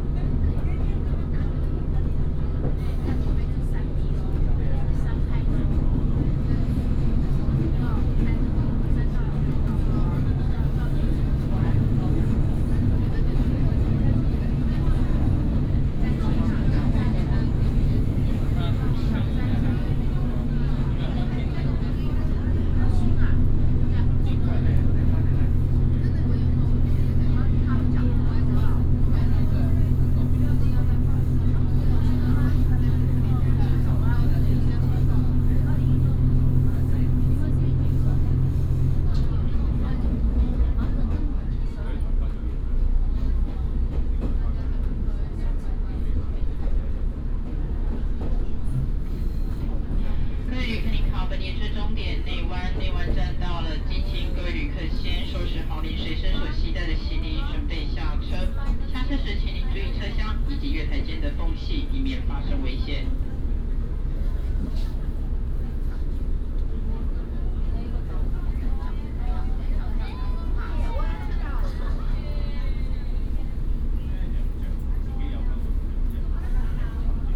In the train compartment, tourist